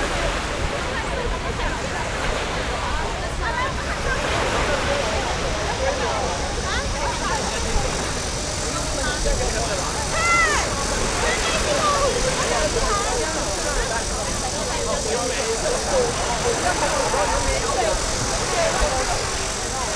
Sanzhi, New Taipei City - Into the platform in front of the beach
桃園縣 (Taoyuan County), 中華民國, 25 June 2012, 10:58